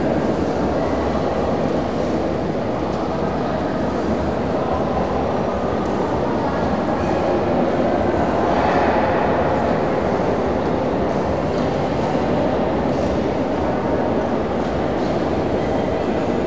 paris, musee du louvre, visitors
in the museum, atmosphere of footsteps and voices of vistors in the grande galerie
international cityscapes - topographic field recordings and social ambiences